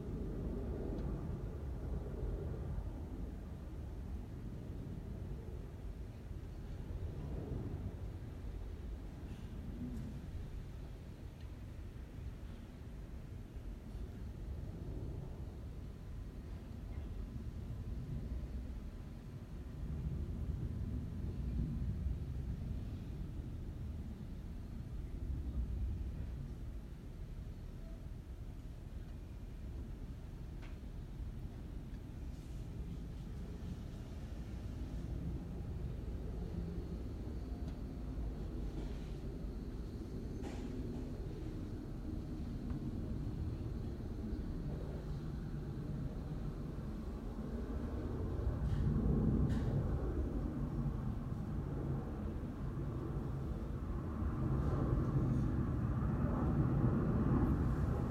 Estr. dos Índios - Bairro do Limoeiro, Arujá - SP, 07432-575, Brasil - Paisagem Sonora para projeto interdisciplinar de captação de áudio e trilha sonora
Áudio captado com intuito de compor um paisagem sonora de um cena sonorizada em aula.
Por ter sido captado em Arujá uma cidade com cerca de 85.000 mil habitantes nos traz o silêncio e a calmaria de uma cidade de interior, diferente da quantidade massiva de sons presentes na cidade de São Paulo durante todo o dia, no áudio o que nós recorda que estamos em uma metrópole são os sons de aviões, e carros ao longe passando na estrada, podemos ouvir também o latido constante de um cachorro em certos momento e pessoas fazendo suas tarefas diárias.
- Bairro do Limoeiro, Arujá - SP, Brazil, April 2019